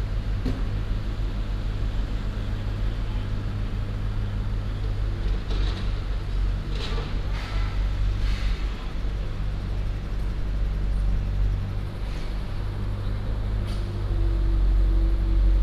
Next to the construction site, traffic sound, Binaural recordings, Sony PCM D100+ Soundman OKM II
East District, Hsinchu City, Taiwan